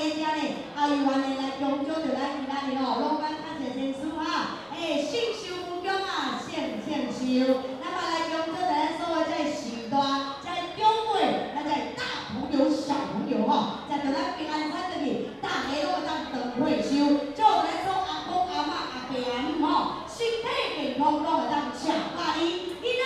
Daren St., Tamsui Dist., 新北市 - Host
Folk Evening party, Dinner Show, Host
Zoom H2n MS+XY